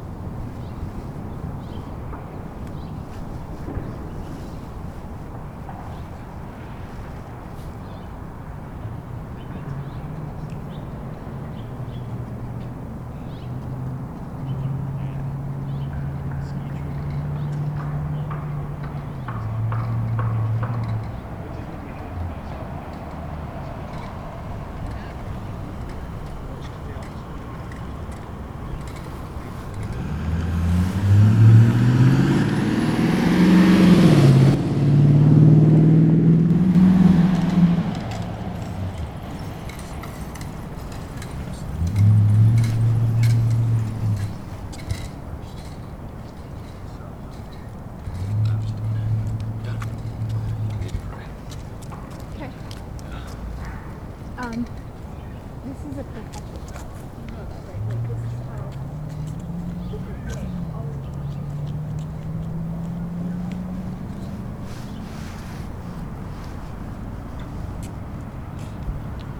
University Hill, Boulder, CO, USA - Wednesday Afternoon
Walking home from the hill I hit a hot spot where I started hearing a variety of various noises.
February 2013